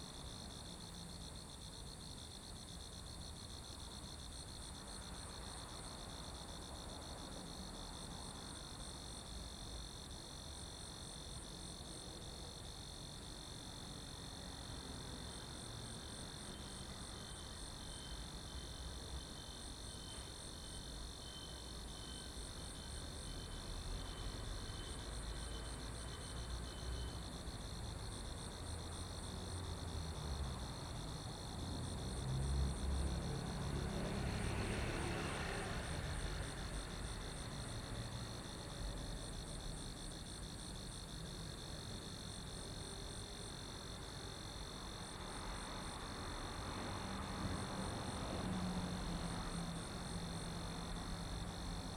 The insects in the bushes, traffic sound, The train runs through
Zoom H6